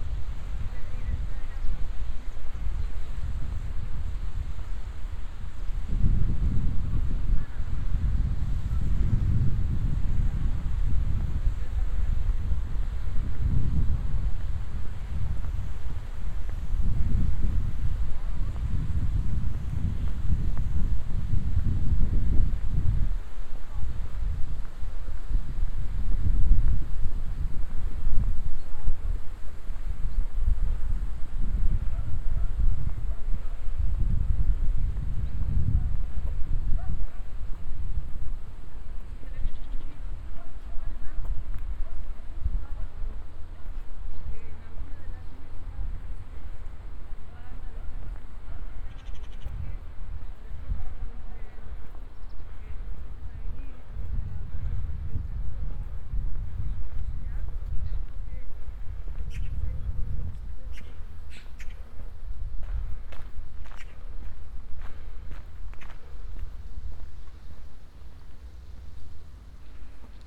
A soundwalk through a park, crossing the Júcar river in Cuenca, Spain.
Luhd binaural microphones -> Sony PCM-D100